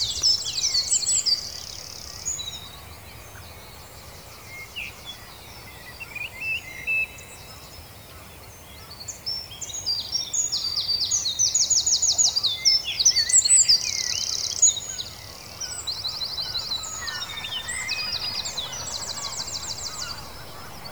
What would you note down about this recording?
A 20 min recording of the 2020 Dawn Chorus. Recorded at The Staiths, Gateshead between the hours of 4.30am and 5.30am. A wonderful vivid soundtrack, featuring a wide variety of bird sounds and noises erupting first thing in the morning.